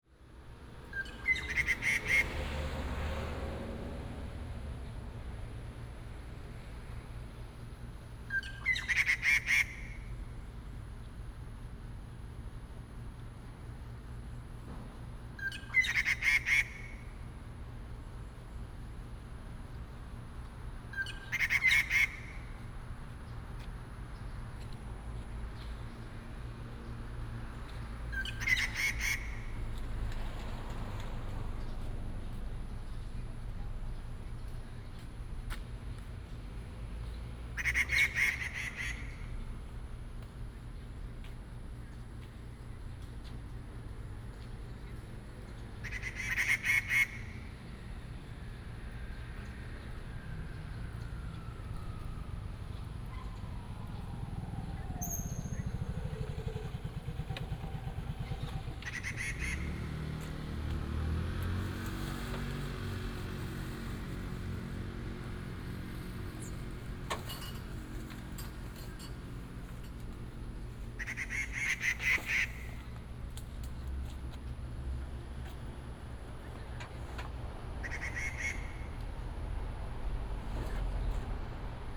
一品公園, Hsinchu City - Birds call
in the park, Birds call, Binaural recordings, Sony PCM D100+ Soundman OKM II
East District, Hsinchu City, Taiwan, 21 September 2017